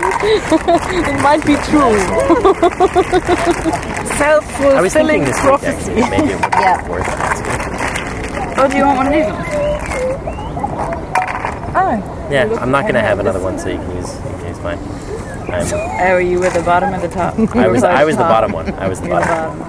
london fields postmove
love of annas laughter